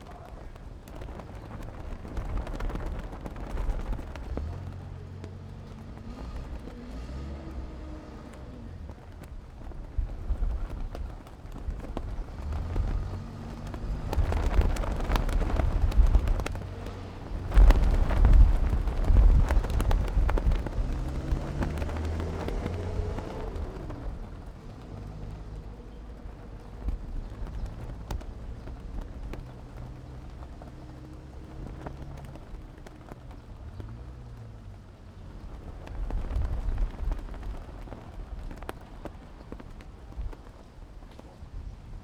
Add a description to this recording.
Wind and Banner, In front of the temple, Next to the pier, Zoom H6+Rode NT4